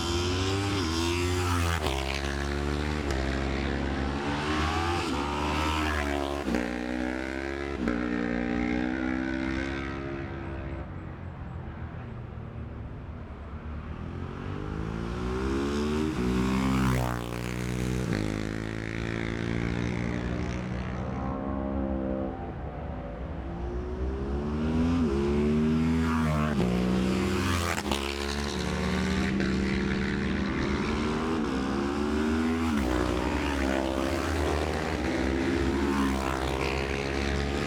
Jacksons Ln, Scarborough, UK - Gold Cup 2020 ...
Gold Cup 2020 ... Twins practice ... dpas sandwich box to MixPre3 ...